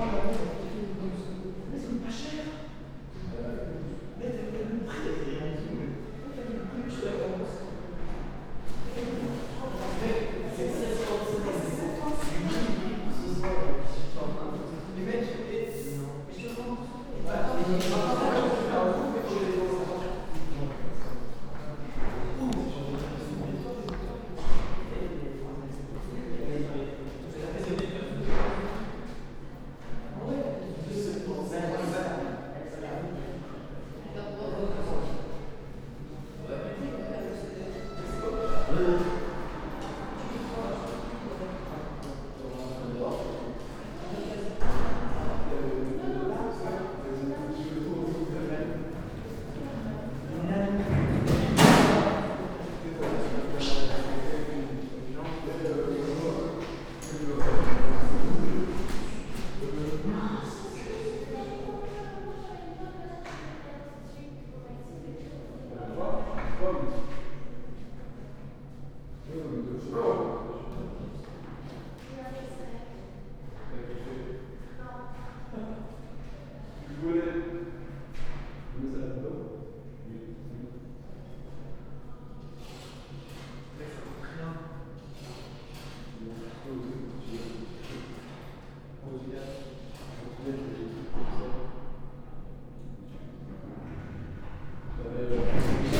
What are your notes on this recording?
In the Mercator corridors, students are joking and buying cans. There's a huge reverb.